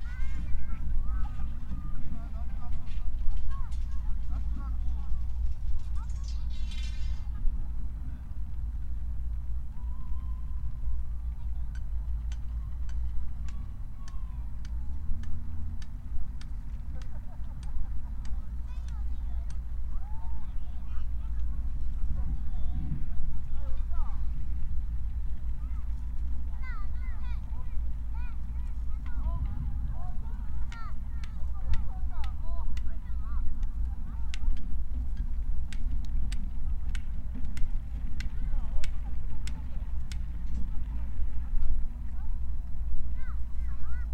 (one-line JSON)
{"title": "At a distance", "date": "2017-12-09 14:10:00", "description": "A rare event, the foreground is silent and from across a distance, from the periphery, the sounds travel over to me...very clear echoes can be heard criss-crossing the auditorium.", "latitude": "37.89", "longitude": "126.74", "altitude": "10", "timezone": "Asia/Seoul"}